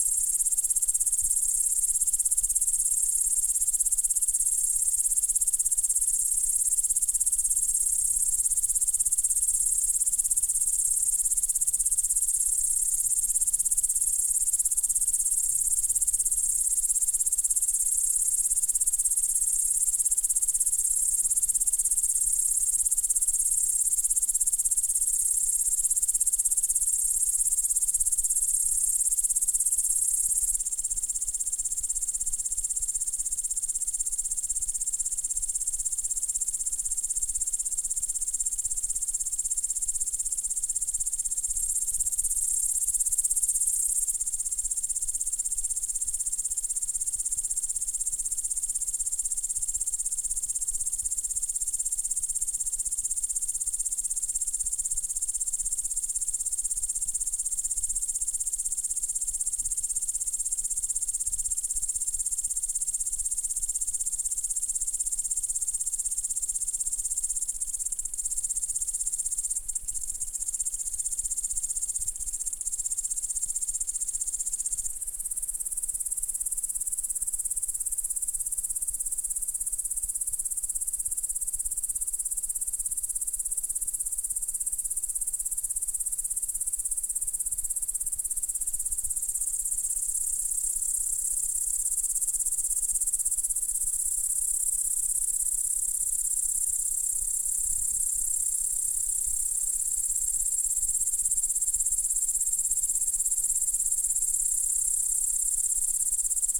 Šlavantai, Lithuania - Grasshoppers
Grasshoppers chirping away in the evening. Recorded with ZOOM H5.